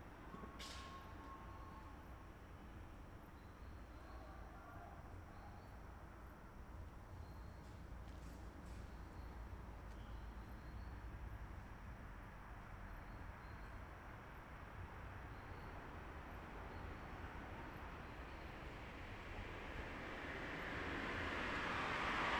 Berlin Wall of Sound, Potsdam Griebnitzsee under railway bridge, August-Bebel-Strasse 120909